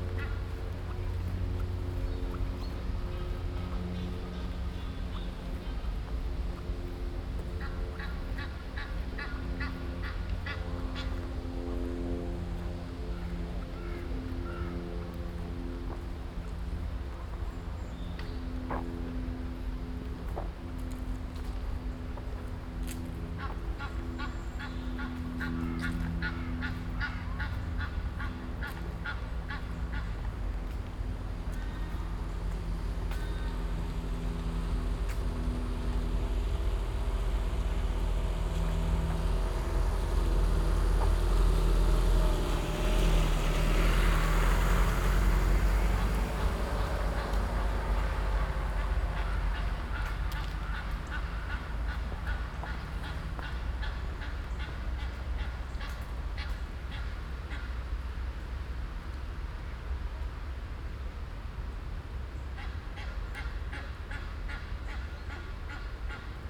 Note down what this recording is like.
A beautiful park with lots of water and its inhabitants in Arnhem.